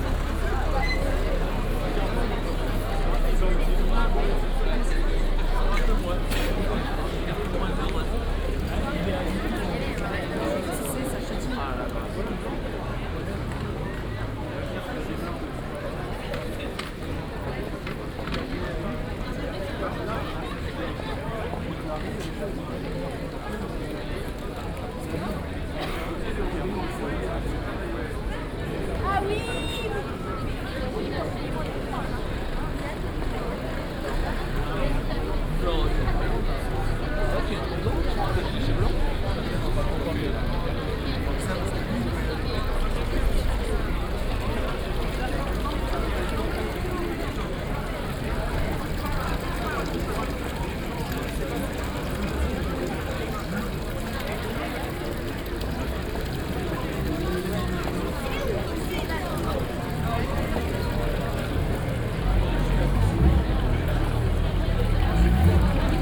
Place des Augustins, Aix-en-Provence - weekend ambience
weekend ambience at Place des Augustins, mild temperture, many people are on the street, short walk around the place
(PCM D50, OKM2)